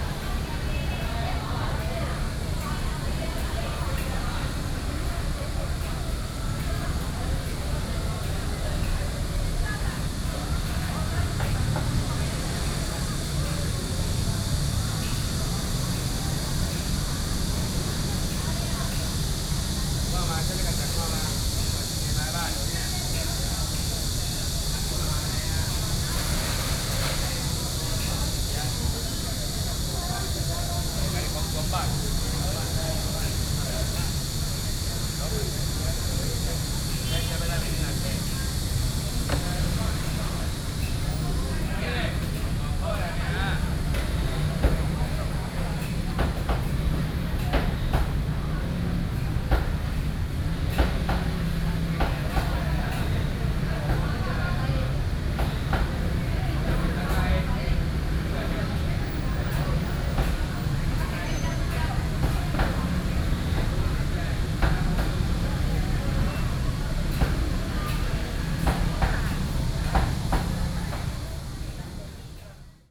崑崙公園, 板橋區沙崙街 - in the Park
in the Park, next to the traditional market, Traffic Sound, Cicadas cry
Binaural recordings, Sony PCM D50